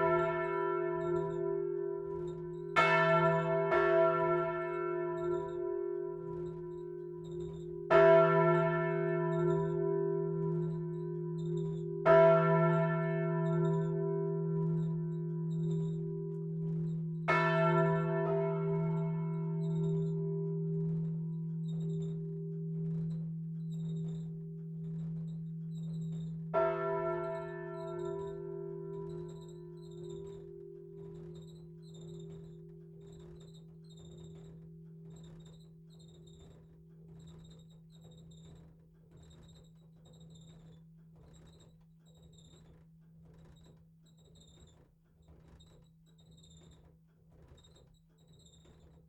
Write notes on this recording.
Haspres - Département du Nord, église St Hugues et St Achere, volée cloche grave.